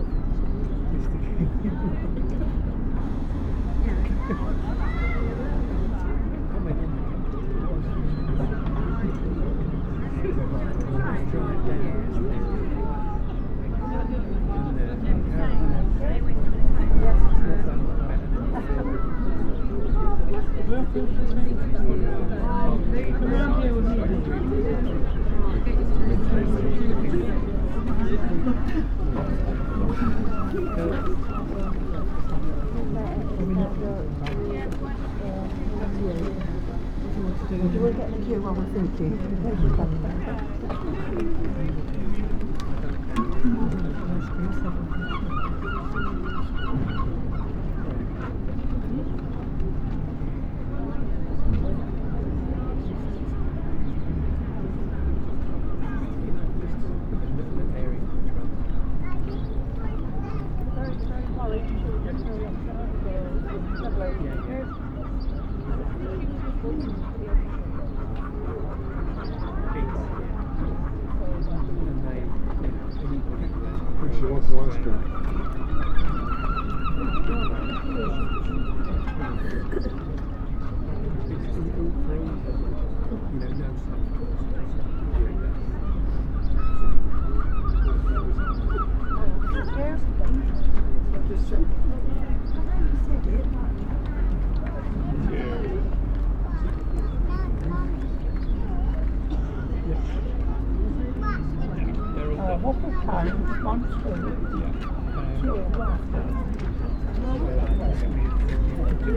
Queue For Ice Cream, Aldeburgh, Suffolk, UK - Queue
On the sea front a queue is waiting for ice cream and coffee from a small shop. The service is slow and the people wait with patience. Passers-by talk and children play. Is this a very "English" scene ? I think so.
I am experimenting again with laying the mics on the ground to make use of a "boundary effect" I have noticed before.
Recorded with a MixPre 6 II and 2 x Sennheiser MKH 8020s.